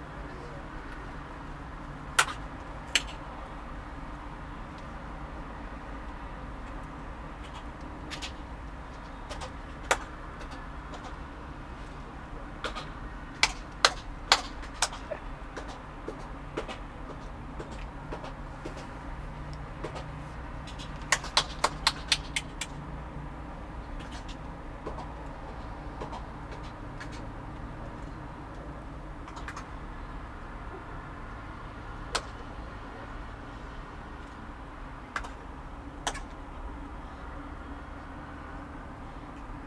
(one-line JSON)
{"title": "Soviet monument in Tallinn, clapping action", "date": "2010-05-20 14:49:00", "description": "recording from the Sonic Surveys of Tallinn workshop, May 2010", "latitude": "59.46", "longitude": "24.81", "altitude": "13", "timezone": "Europe/Tallinn"}